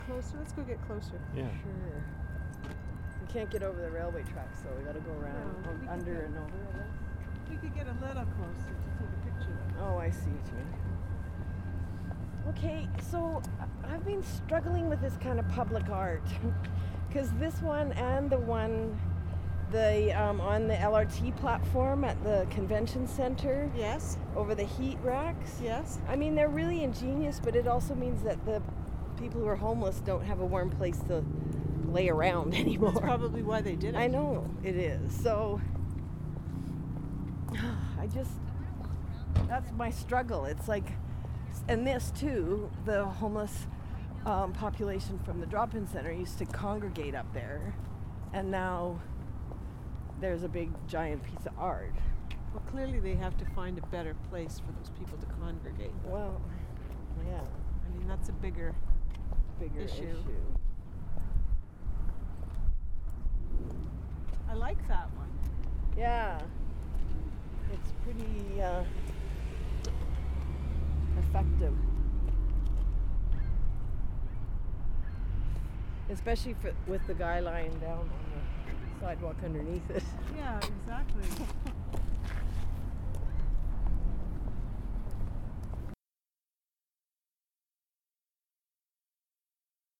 Fort Calgary, Ave SE, Calgary, AB, Canada - Public Art
This is my Village
Tomas Jonsson